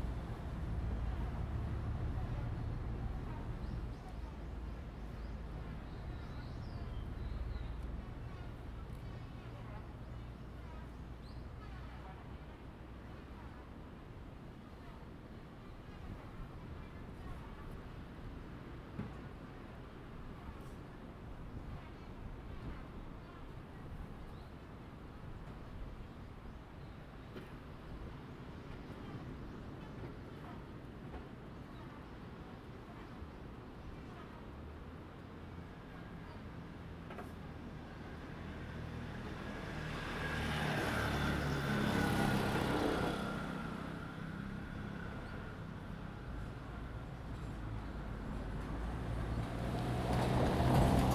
野銀部落, Ponso no Tao - Traditional Aboriginal tribe
In the side of the road, Traditional Aboriginal tribe, Traffic Sound
Zoom H2n MS +XY